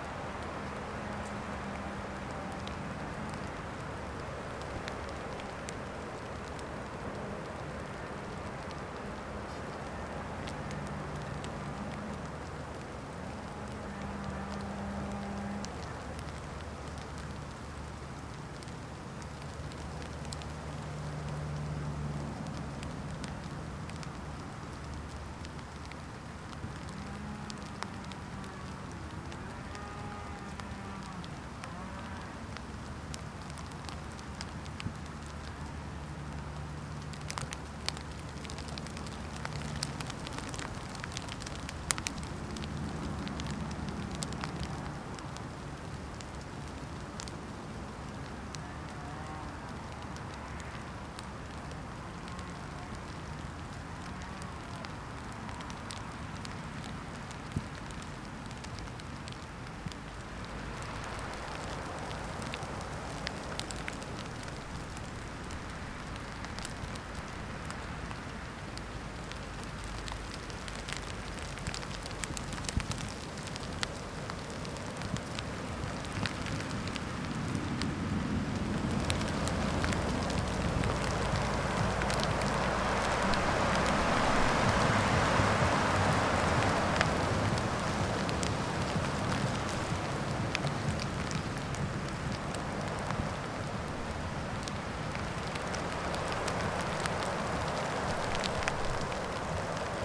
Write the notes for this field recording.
Going back to my hone from daily walk.